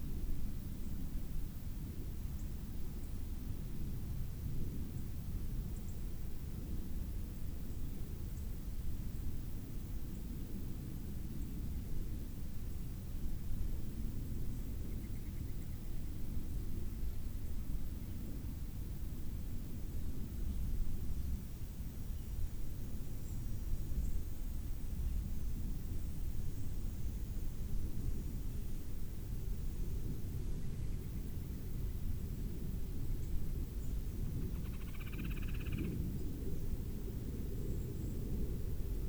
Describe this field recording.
More sounds of birds, insects and cars, heard at the entrance to Grass Lake Sanctuary. The mixture here of natural and human-made vehicle sounds became a theme on this visit to GLS. The closeness of the everyday mechanical world highlights the need to preserve nature sanctuaries like GLS. WLD, phonography, Grass Lake Sanctuary